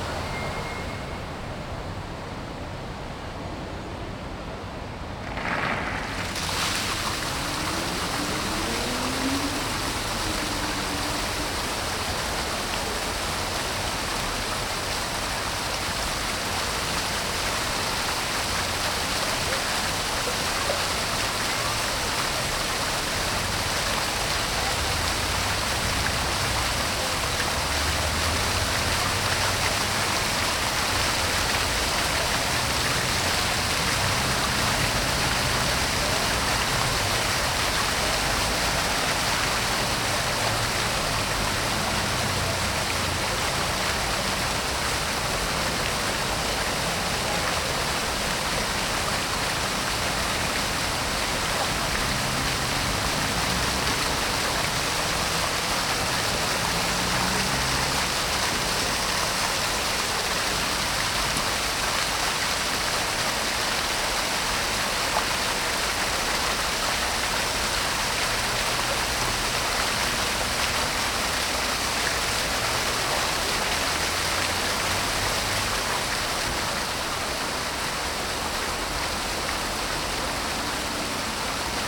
Rennes, Fontaine de la gare (gauche)
Fontaine de gauche au sol, jet vertical de la gare de Rennes (35 - France)
Rennes, France, 8 May 2011